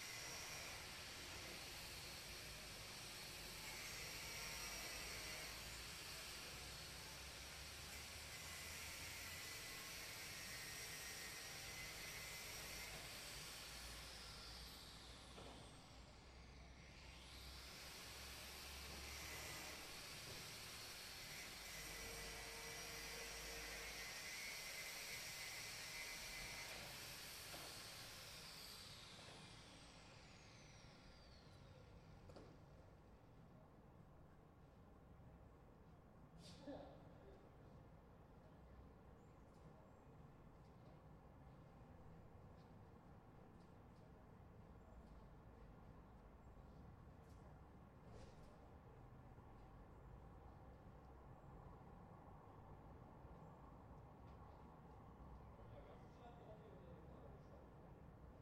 Craftsmen working, inevtably listening to the radio while airplanes keep coming in. A normal day in the northern part of cologne.
Cologne, Germany, March 2012